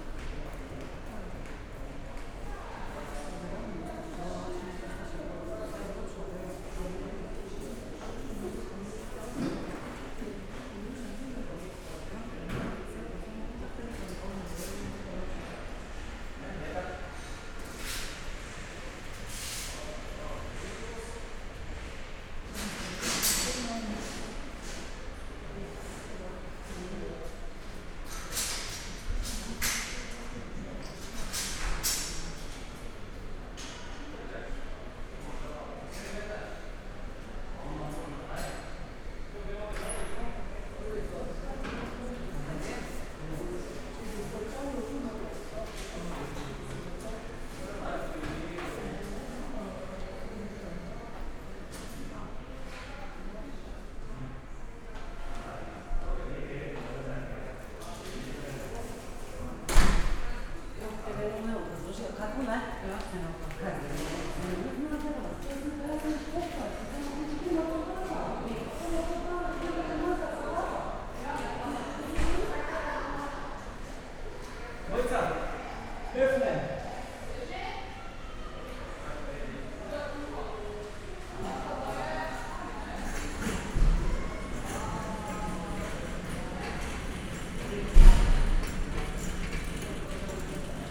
UKC Maribor, hospital, entrance area - strolling around
Univerzitetni klinični center Maribor, walking around in the hospital
(SD702 DPA4060)